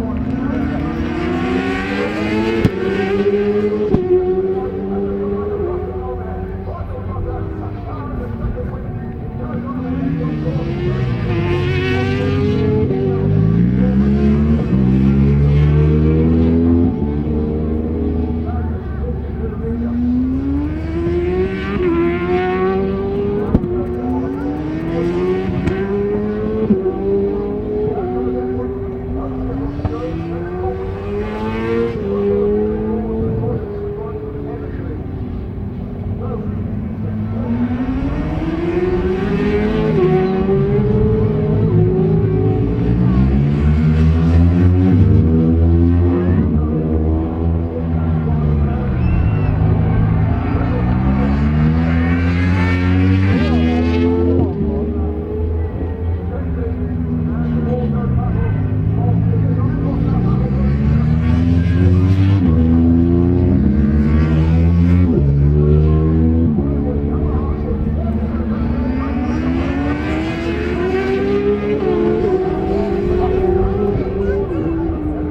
Unit 3 Within Snetterton Circuit, W Harling Rd, Norwich, United Kingdom - BSB 2001 ... Superbikes ... Race 2 ...
BSB 2001 ... Superbikes ... Race 2 ... one point stereo mic to minidisk ... commentary ...